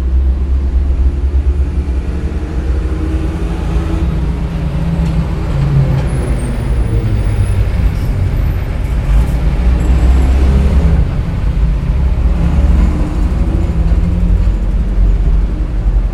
Koluszki, Poland - viaduct
Under the viaduct, 3rd World Listening Day.
July 2012